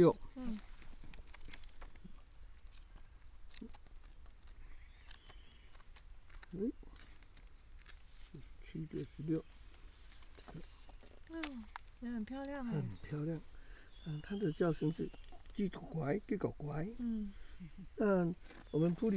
草楠濕地, 桃米里, Nantou County - Professor of ecology tour
in the wetlands, Bird sounds, Professor of ecology tour
26 March 2016, 09:13